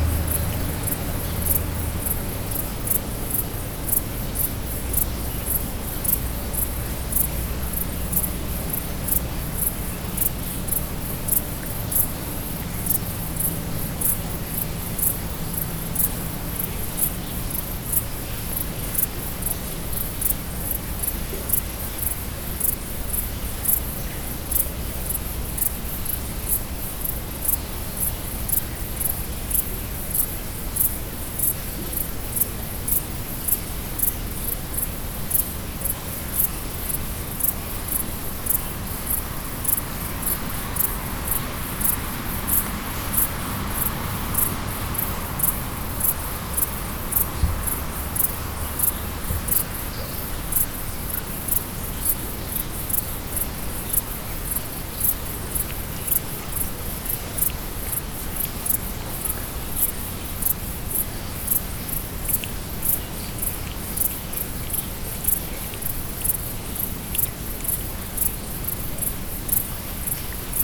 Sizun, France - Bords de l'Elorn, nuit d'été
Bords de la rivière Elorn
De nuit, l'été